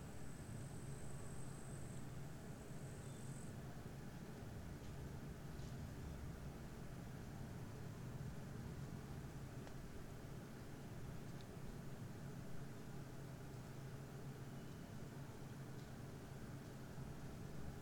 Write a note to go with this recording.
spa hotel, some traffic...and forest